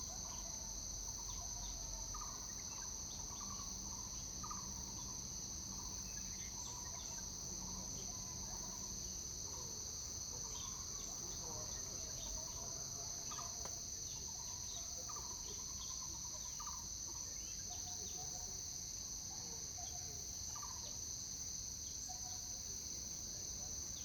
橫山鄉沙坑農路, Hsinchu County - a variety of birds sound
Morning in the mountains, forest, a variety of birds sound, Zoom H2n MS+XY
Hsinchu County, Taiwan, 12 September 2017, 07:38